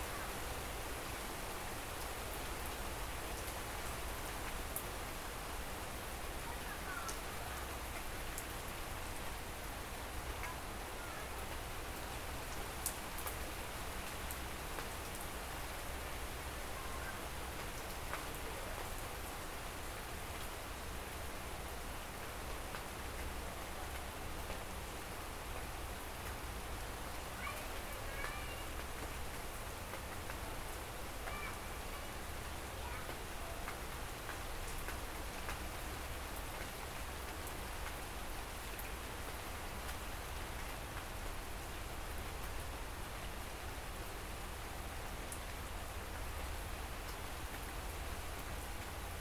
Jl. DR. Setiabudhi, Isola, Sukasari, Kota Bandung, Jawa Barat, Indonesia - Indonesian prayer ambience and rain
Recorded with Roland R09 just inside patio doors: afternoon prayer chants are heard after a rain shower, rain resumes, there is some thunder, the prayer chants resume in the rain, and some ambience of the city can be heard.